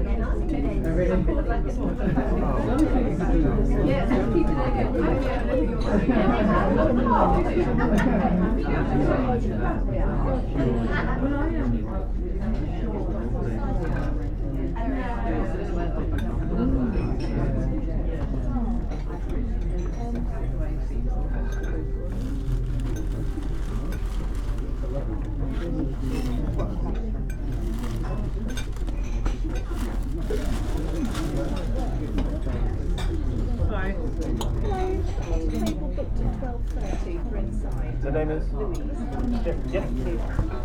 Busy Cafe, Ledbury, Herefordshire, UK - Busy Cafe
Lunch time in a busy cafe. It is warm and we are eating outside in the courtyard.
MixPre 6 II with 2 x Sennheiser MKH 8020s. My home made windjammer is mistaken for a dog under the table, the third time this has happened.